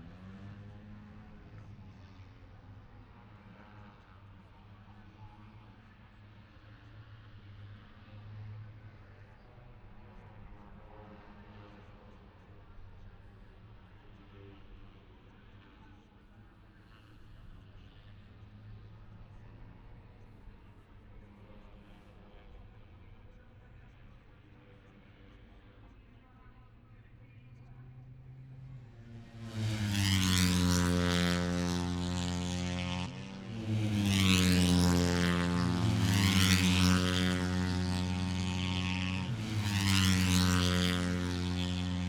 {"title": "Silverstone Circuit, Towcester, UK - british motorcycle grand prix 2021 ... moto three ...", "date": "2021-08-28 13:00:00", "description": "moto three qualifying two ... wellington straight ... dpa 4060s to Zoom H5 ...", "latitude": "52.08", "longitude": "-1.02", "altitude": "157", "timezone": "Europe/London"}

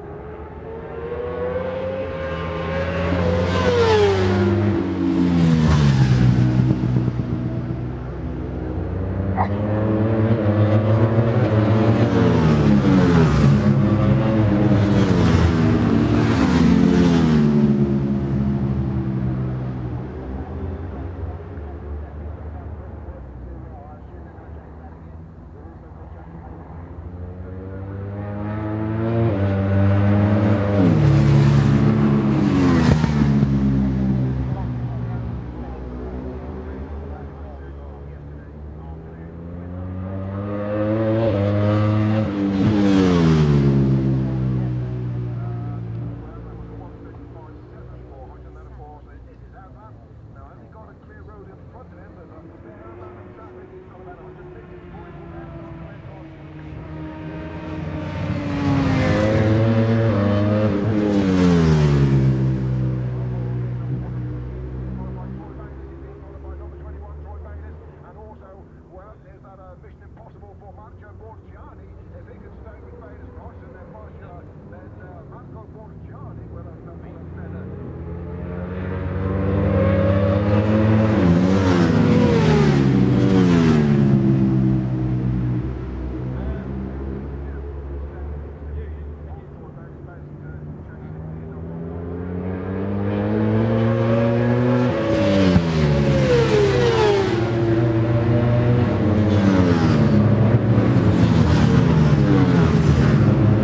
{"title": "Brands Hatch GP Circuit, West Kingsdown, Longfield, UK - World Superbikes 2001 ... superbikes ...", "date": "2001-07-23 11:00:00", "description": "World Superbikes 2001 ... Qualifying ... part one ... one point stereo mic to minidisk ...", "latitude": "51.35", "longitude": "0.26", "altitude": "151", "timezone": "Europe/London"}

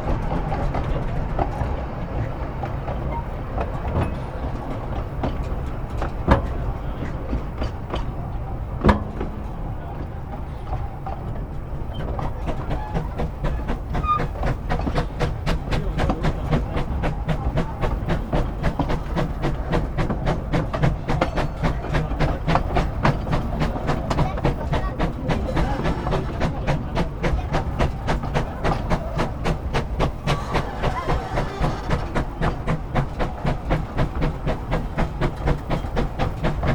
{"title": "Steam Train Climbs to Torpantau - Brecon Mountain Railway, Merthyr Tydfil, Wales, UK", "date": "2019-07-16 11:48:00", "description": "A ride on the preserved narrow steam train as it climbs up to the lonely station at Torpantau in The Brecon Beacons National Park. Recorded with a Sound Device Mix Pre 3 and 2 Senhheiser MKH 8020s while standing on the front observation platform of the first coach immediately behind the engine.", "latitude": "51.80", "longitude": "-3.36", "altitude": "338", "timezone": "Europe/London"}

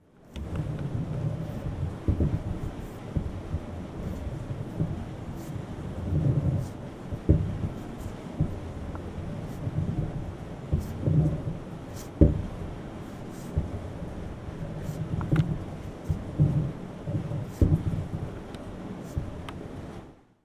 {"title": "Trehörningsjö, kvarnsten - Millstone", "date": "2010-07-18 19:57:00", "description": "Millstone turning. Recording made on World Listening Day, 18th july 2010.", "latitude": "63.69", "longitude": "18.84", "altitude": "174", "timezone": "Europe/Stockholm"}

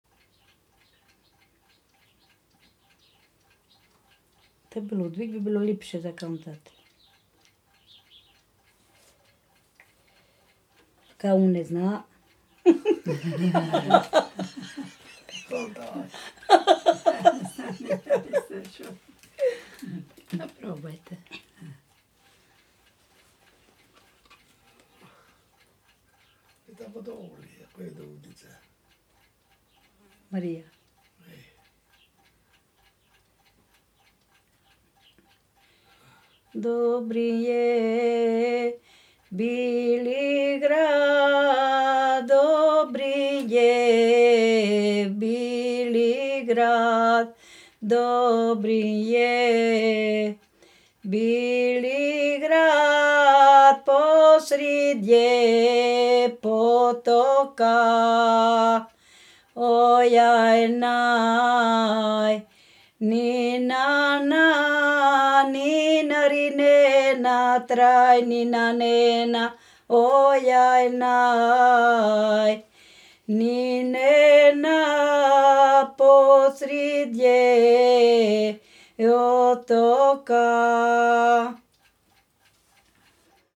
{"title": "Island Krk, Croatia, an old song - Jele singing in her home", "date": "1997-07-15 11:50:00", "description": "an old lady singing an old song about the nearby town Dobrinj, recorded in her home", "latitude": "45.12", "longitude": "14.57", "altitude": "174", "timezone": "Europe/Zagreb"}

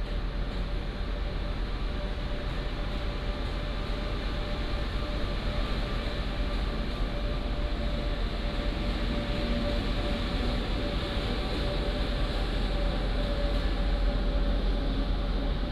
{"title": "Changhua Station, 彰化縣 - Above the tracks", "date": "2017-02-13 08:39:00", "description": "Above the tracks", "latitude": "24.08", "longitude": "120.54", "altitude": "17", "timezone": "GMT+1"}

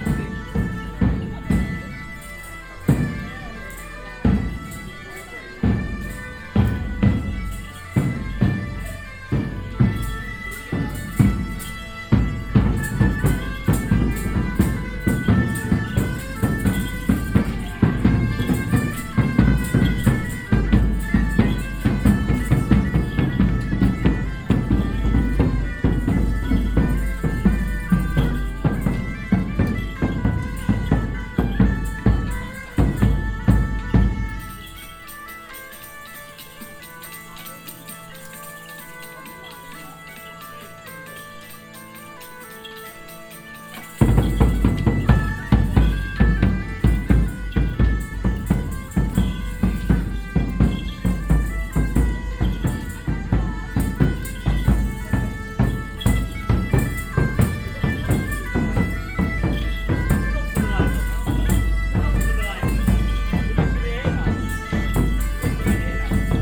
{"title": "vianden, grand rue, medieval parade and street talk", "date": "2011-08-09 20:52:00", "description": "In the late evening on the main road of the village. A group of showmen in medieval costumes celebrating a musical procession. At the end a street talk of two village residents.\nVianden, Hauptstraße, Mittelalterliche Parade und Straßengespräche\nAm späten Abend auf der Hauptstraße der Stadt. Eine Gruppe von Verkäufern in mittelalterlichen Kostümen feiert eine musikalische Prozession. Am Ende ein Straßengespräch von zwei Ortsbewohnern.\nVianden, grand rue, parade médiévale et discussions de rue\nLe soir sur la route principale du village. Un groupe de forains en costumes médiévaux pendant un défilé musical. Discussion entre deux habitants du village au bout de la rue.\nProject - Klangraum Our - topographic field recordings, sound objects and social ambiences", "latitude": "49.93", "longitude": "6.20", "altitude": "243", "timezone": "Europe/Luxembourg"}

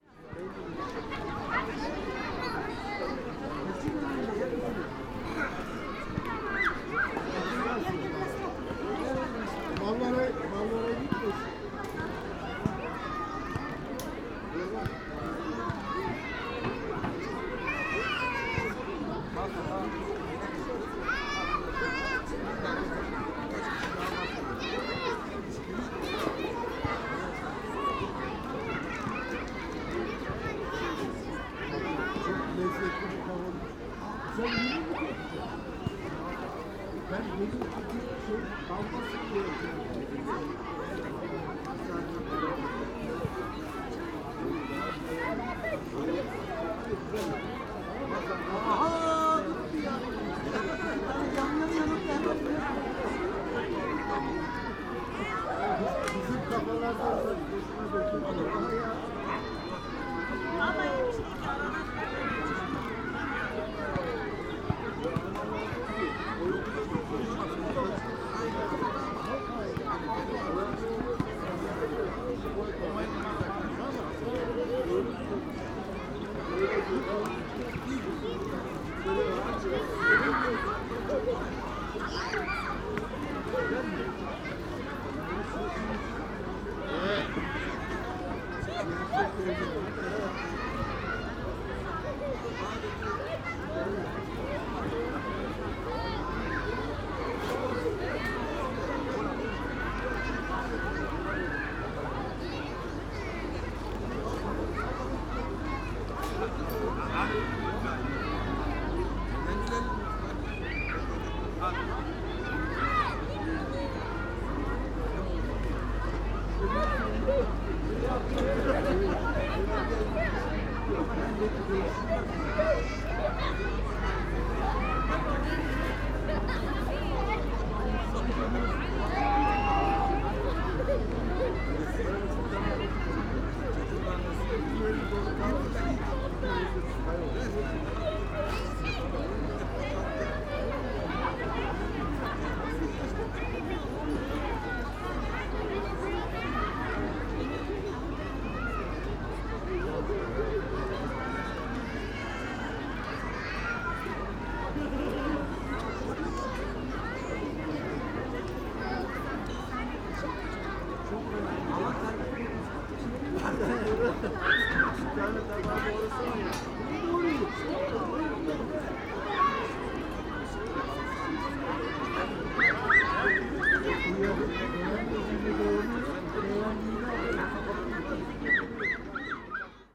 {"title": "Kreuzberg, Berlin - Landwehr-/Teltowkanal, BBQs", "date": "2012-04-29 17:00:00", "description": "sunday afternoon, this place is full of people having barbecues.\n(tech: Sony PCM D50)", "latitude": "52.49", "longitude": "13.44", "altitude": "35", "timezone": "Europe/Berlin"}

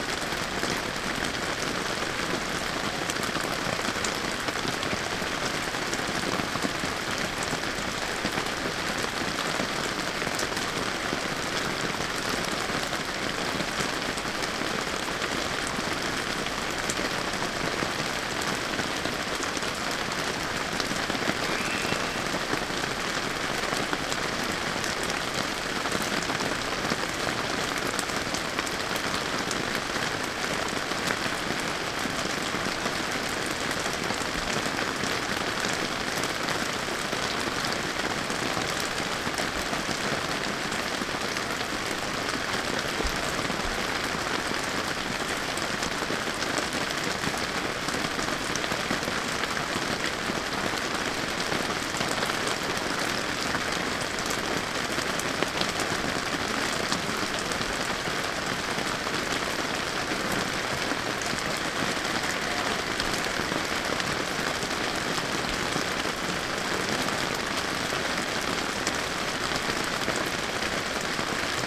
{"title": "Alouette campground - Golden Ears Provincial Park - Camping sous la pluie", "date": "2022-08-03 21:32:00", "description": "Le début d'une longue nuit pluvieuse telle qu'on pouvait l'entendre de l'intérieur de la tente.", "latitude": "49.32", "longitude": "-122.46", "altitude": "176", "timezone": "America/Vancouver"}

